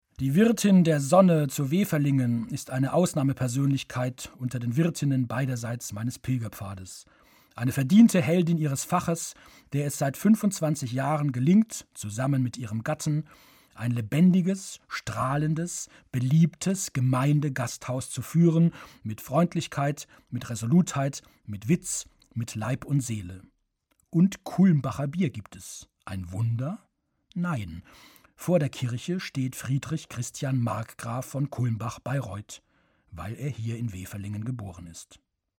{"title": "weferlingen - zur sonne", "date": "2009-08-08 21:33:00", "description": "Produktion: Deutschlandradio Kultur/Norddeutscher Rundfunk 2009", "latitude": "52.32", "longitude": "11.06", "altitude": "94", "timezone": "Europe/Berlin"}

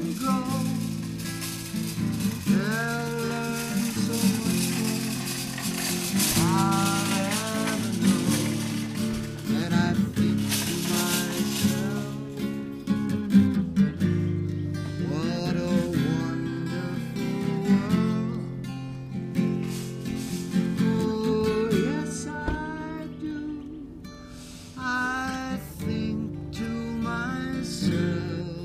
{"title": "Highland Square Mustard Seed Parking Lot, Akron, OH, USA - Busker in Mustard Seed Market Parking Lot", "date": "2017-05-14 14:22:00", "description": "Busker and Akronite, George, performs in the parking lot of neighborhood grocery store, Mustard Seed Market. You will hear cars, shopping carts, and people interacting with George. A short interview with George follows.", "latitude": "41.10", "longitude": "-81.54", "altitude": "336", "timezone": "America/New_York"}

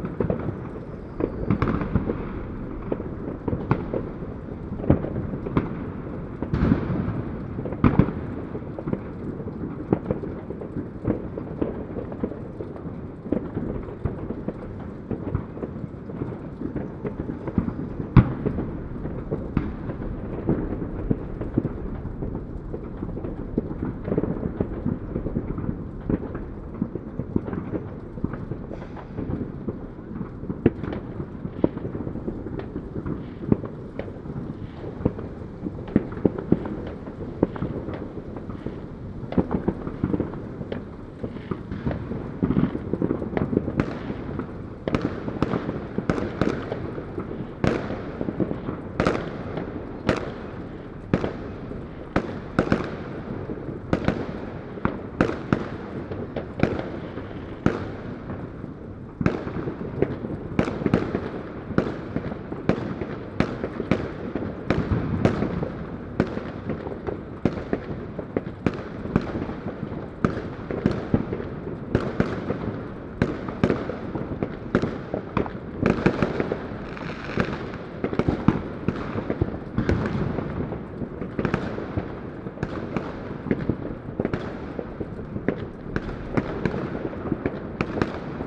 {"title": "Rooftop of Zyblikiewcza, Kraków, Poland - (879) Midnight at New Year's Eve", "date": "2021-12-31 23:57:00", "description": "Recording from a rooftop in roughly the city center of Krakow. The excerpt starts around 3 minutes before midnight.\nAB stereo recording (29cm) made with Sennheiser MKH 8020 on Sound Devices MixPre-6 II.", "latitude": "50.06", "longitude": "19.95", "altitude": "210", "timezone": "Europe/Warsaw"}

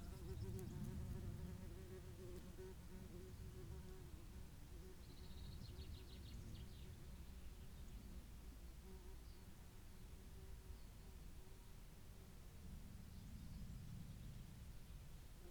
{"title": "Green Ln, Malton, UK - grubbed out bees nest ...", "date": "2021-06-21 08:21:00", "description": "grubbed out bees nest ... buff tipped bees nest ..? dug up by a badger ..? dpa 4060s in parabolic to MixPre3 ... parabolic resting on lip of nest ... bird song ... calls ... yellowhammer ... blackbird ... whitethroat ...", "latitude": "54.12", "longitude": "-0.56", "altitude": "92", "timezone": "Europe/London"}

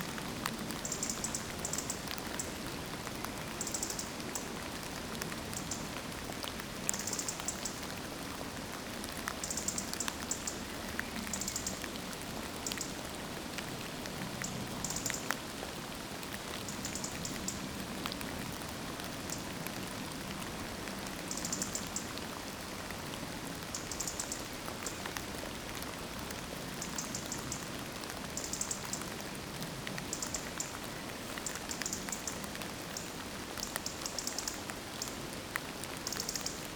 {"title": "Mont-Saint-Guibert, Belgique - In the cemetery", "date": "2016-05-22 20:30:00", "description": "We are here in a kind of special place, as this is an abandoned monks cemetery. On the satelitte view, you can't distinguish it because of the abondant trees. Monks were all working in the school just near (south-west). It was a long time ago. Long... Not so far as numerous people knew them. Today, there's no more anybody to maintain this place. That's sad because there are very-very-very few people knowing this is existing ; simply no more than this, in fact it's sad to say it's an oblivion. Surprisingly, it's also a motivating place as nature is completely free to grow and yell. I was wishing to speak, somewere, about this forgotten monks, without judging their life and their teaching, just because solely everybody merit memory. This place is recorded below a constant quiet rain, mingled with the unceasing trains and frightful planes. A very-very small piece of peace in the midst of life.", "latitude": "50.64", "longitude": "4.60", "altitude": "78", "timezone": "Europe/Brussels"}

